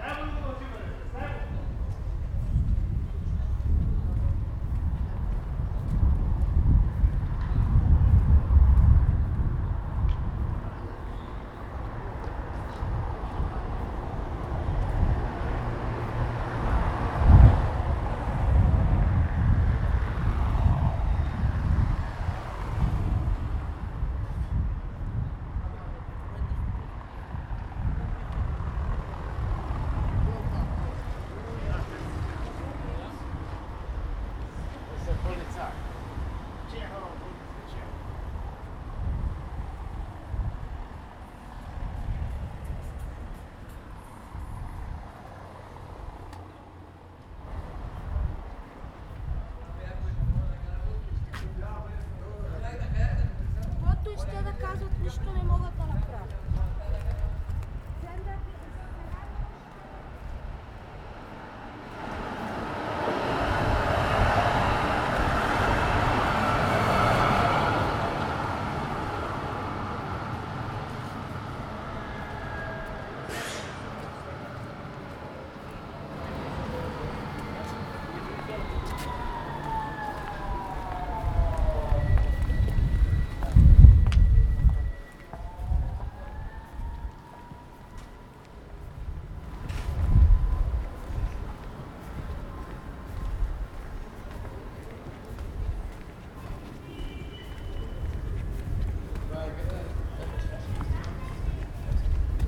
alter Meßplatz, Mannheim - Kasimir Malewitsch walk, eight red rectangles
skating, playing, sun, wind, clouds